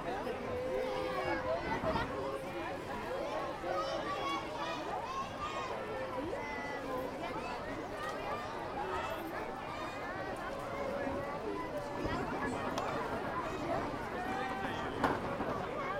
{"title": "Hoogstraat, Abcoude, Netherlands - Kingsday in Abcoude", "date": "2018-04-30 12:16:00", "description": "Moving audio (Binaural) on a flea market at Kingsday in the Netherlands.", "latitude": "52.27", "longitude": "4.97", "altitude": "6", "timezone": "Europe/Amsterdam"}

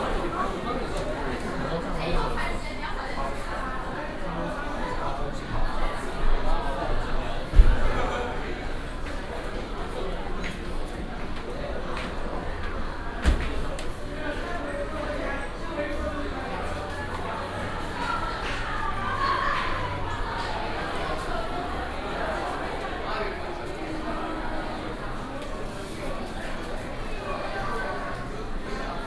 {"title": "Eslite Bookstore, Sec., Xinsheng S. Rd. - Walking inside the bookstore", "date": "2012-06-09 19:27:00", "description": "Walking inside the bookstore\nSony PCM D50 + Soundman OKM II", "latitude": "25.02", "longitude": "121.53", "altitude": "19", "timezone": "Asia/Taipei"}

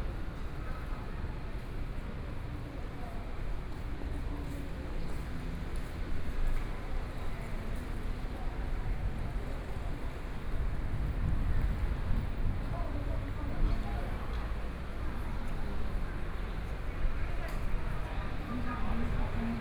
{"title": "中山區龍洲里, Taipei City - soundwalk", "date": "2014-05-02 15:34:00", "description": "Walking on the road, Traffic Sound, Convenience Store", "latitude": "25.06", "longitude": "121.54", "altitude": "16", "timezone": "Asia/Taipei"}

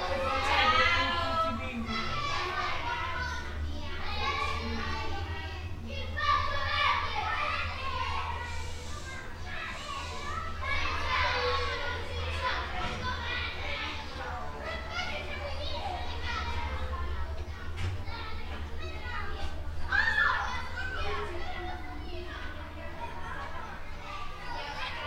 {"title": "Via Leone Amici, Serra De Conti AN, Italia - Kindergarten exit caught from under an arch", "date": "2018-05-26 15:45:00", "description": "Sony Dr 100 with windscreen (like all my recordings on this map).", "latitude": "43.54", "longitude": "13.04", "altitude": "213", "timezone": "Europe/Rome"}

